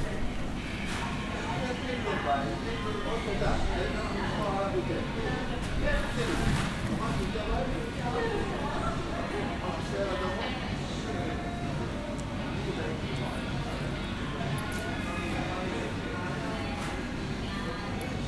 Mahane Yehuda St, Jerusalem, Israel - Iraqi Market at Machane Yehuda, Jerusalem
Iraqi Market at Machane Yehuda, Jerusalem, closing market time, night time.